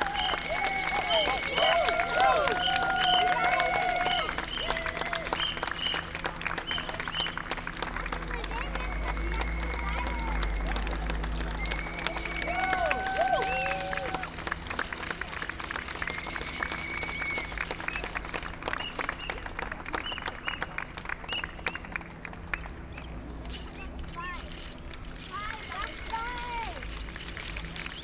kottbusser damm, schönleinstr. - berlin marathon
28.09.2008 9:15
berlin marathon, km 16, kottbusser damm
28 September 2008, 09:15